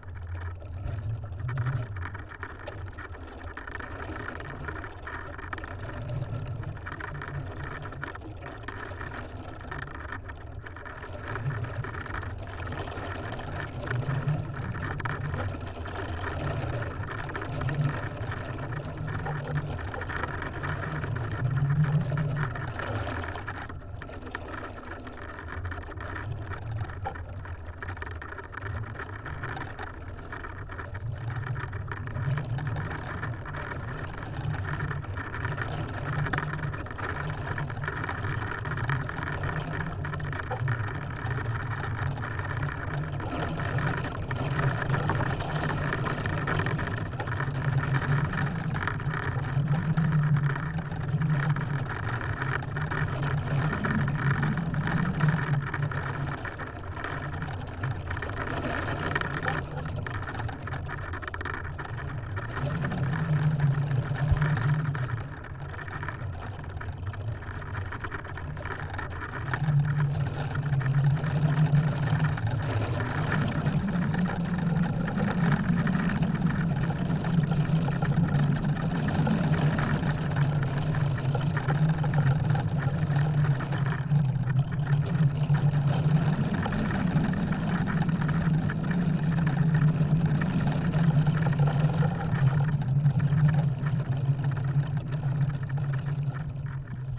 poplar leaves in the wind, recorded with a contact microphone.
(PCM D50, DIY contact mics)